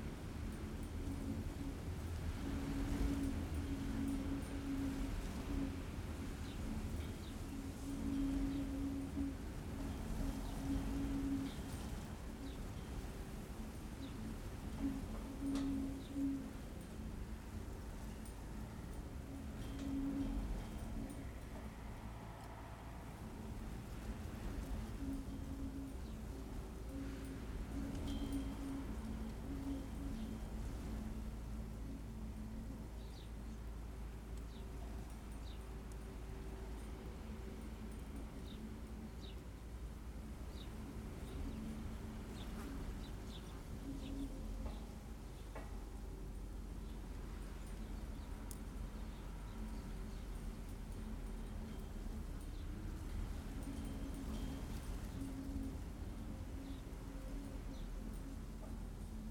Troon, Camborne, Cornwall, UK - The Wind and the Gate
Windy dry day. A recording of the wind in hedgerows and passing through a gate. DPA4060 microphones, Sound Devices Mixpre-D and Tascam DR100.